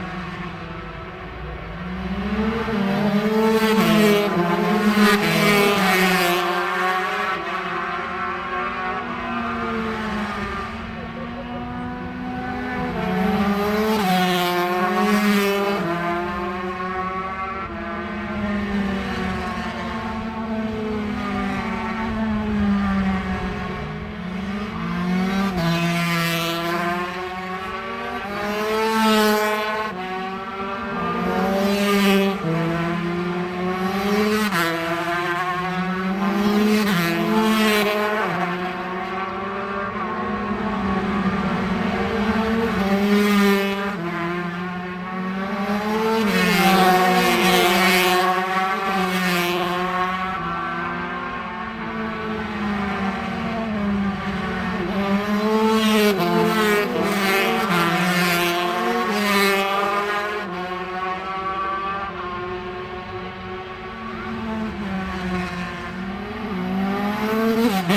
british superbikes 2002 ... 125 free practice ... mallory park ... one point stereo mic to mini disk ... date correct ... time not ...